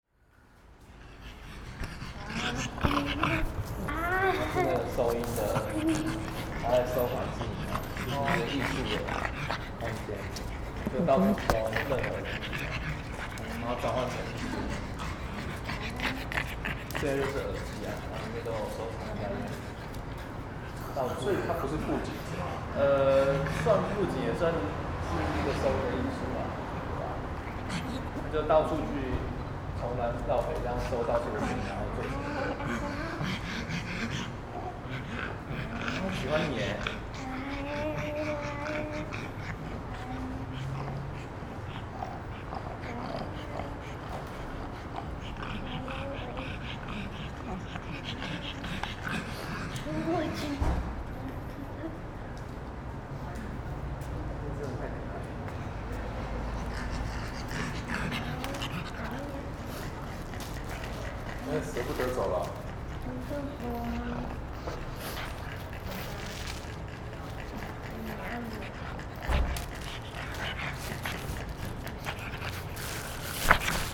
tamtamART.Taipei - dog
dog in the gallery, Sony PCM D50